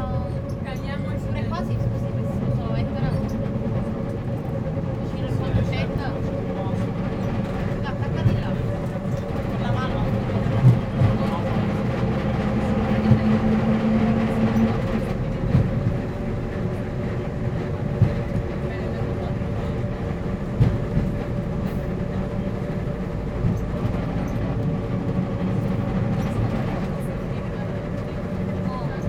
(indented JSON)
{
  "title": "Porto, R.do Infante Dom Henrique - Electrico",
  "date": "2010-10-16 11:55:00",
  "description": "old tram line 1",
  "latitude": "41.14",
  "longitude": "-8.62",
  "altitude": "19",
  "timezone": "Europe/Lisbon"
}